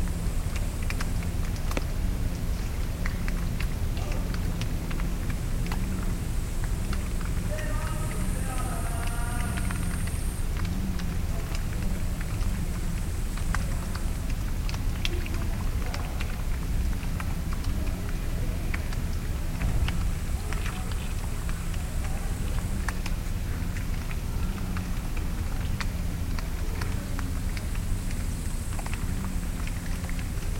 Vigala manor gardens, pond clicks
pond life clicks at the beginning of the end of an unusually hot summer
Vigala Parish, Raplamaa, Estonia, 13 August 2010, 16:04